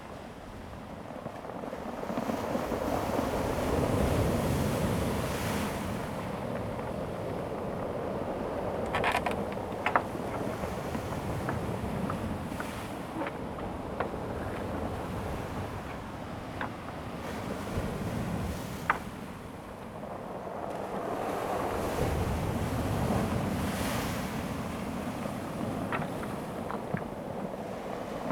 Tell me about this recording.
Sound of the waves, In the circular stone shore, The weather is very hot, Zoom H2n MS +XY